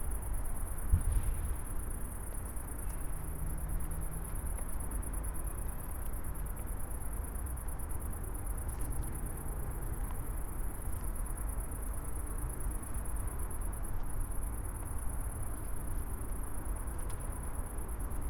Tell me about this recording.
Berlin, Beermannstr., construction site for the A100 Autobahn. The two houses at the edge of the road are still there, but will be demolished soon. In near future, the motorway will go straight through this place. (Sony PCM D50, Primo EM172)